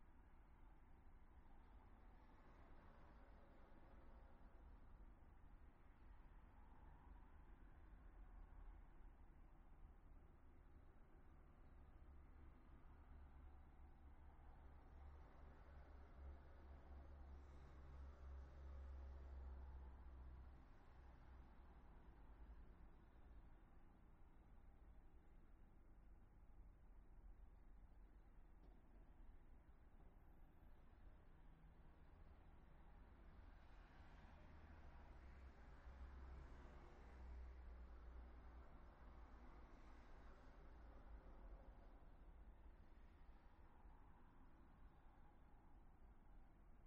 Via Federico Ricci - Almost NYE
few hours before midnight. not going to any party.